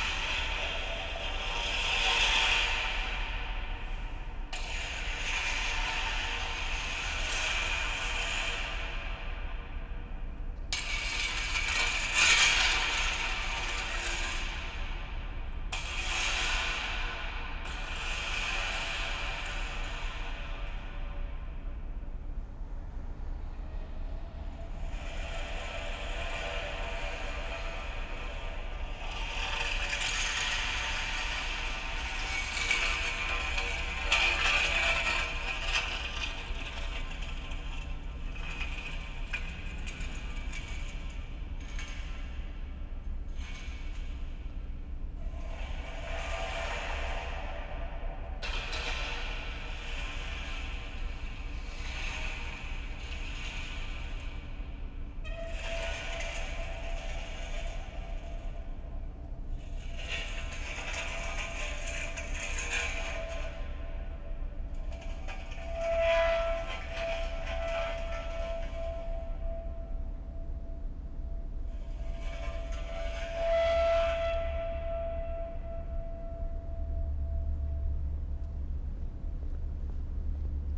Beyoğlu/Istanbul Province, Turkey - metal drawing
scraping floor of warehouse with long metal beam.
ST250 mic, Dat recorder